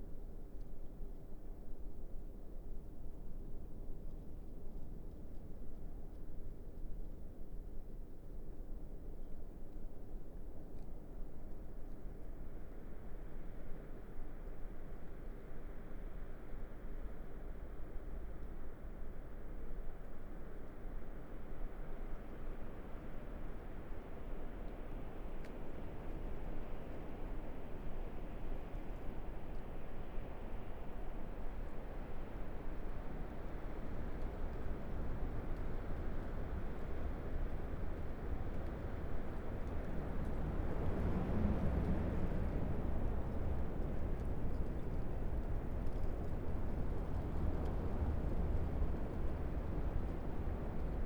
Liptovská Kokava, Slovakia - Liptovká Kokava, Slovakia: Wind Across Snowy Fields
Winter in Liptovská Kokava village in northern part of Slovakia. Recorded near last house on the street on the border of village before it opens to wide fields. Those are covered with snow, it is freezing and still snowing. Winds blow across vast snowfields which makes an interesting winter soundscape.